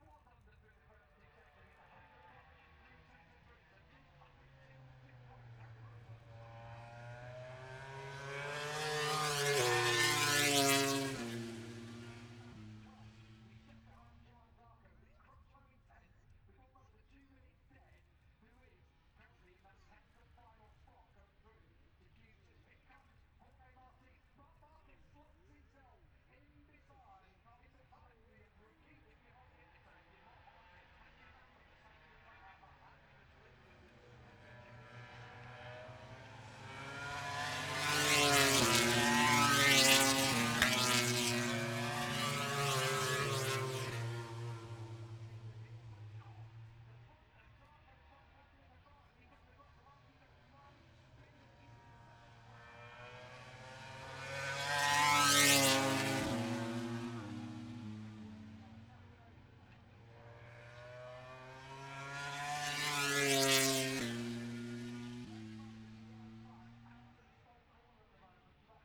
moto grand prix free practice three ... copse corner ... dpa 4060s to Zoom H5 ...